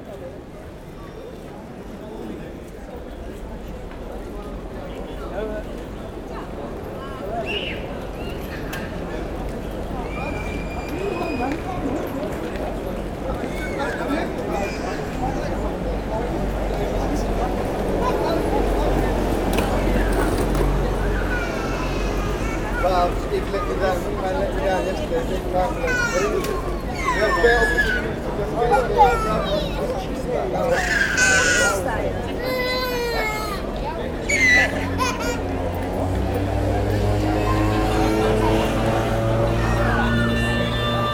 Antwerpen, Belgique - Strohviol player
A very poor person is badly playing strohviol, a small violin coming from Romania.
Antwerpen, Belgium